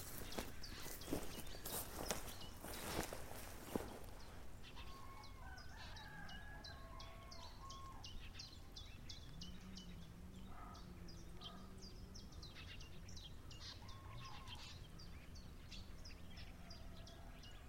excerpt from a quiet, transparent soundscape on a wet and cold morning. thinking of jana ...